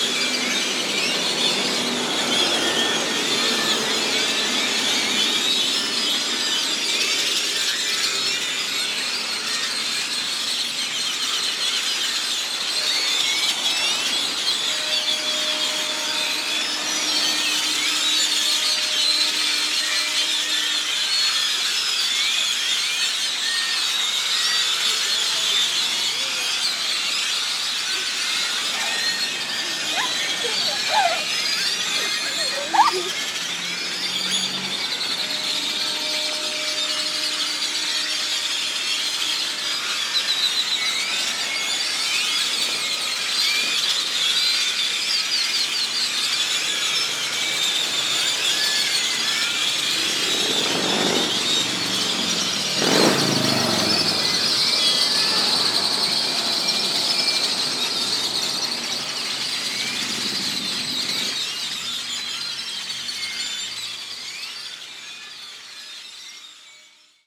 Parque España, San José, Costa Rica - Birds at dusk
A large tree full of birds. Not sure what species. Zoom H2 with highpass filter post processing.